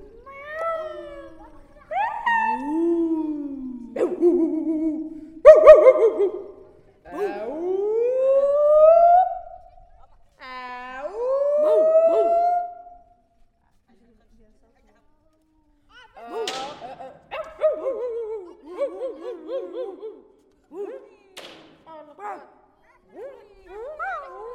Laâssilat, Maroc - Enfants jouant à faire des bruits danimaux dans une citerne
Ce son a été enregistré à Laâssilat dans une vieille citerne de ciment. Nous avons fait des percussions avec Ahmed, Mohamed, Hamouda, Aimane, Yahya, Abdeslam, Amine, Amine et Omar et des sons de loup, des sons d'âne, des sons de chevaux et des sons de chiens.
Son enregistré par Amine, Chahine et Omar.
Hada sawt kan fi Laâssilat ou kouna 3la bouta ou tebelna ou drebna. Kano Ahmed, Mohamed, Hamouda, Aimane, Yahya, Abdeslam, Amine, Amine wa Omar. Wa derna sawt diab, sawt hamir, sawt hissan, wa sawt kilab.
cercle de Bouskoura, Province Nouaceur إقليم النواصر, Casablanca-Settat ⵜⵉⴳⵎⵉ ⵜⵓⵎⵍⵉⵍⵜ-ⵙⵟⵟⴰⵜ الدار البيضاء-سطات